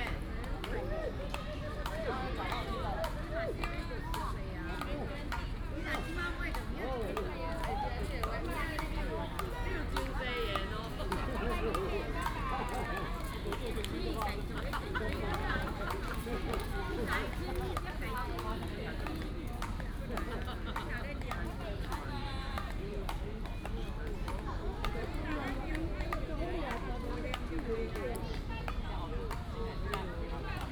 石雕公園, Banqiao Dist., New Taipei City - playing badminton
A lot of people playing badminton, in the Park
29 July, ~17:00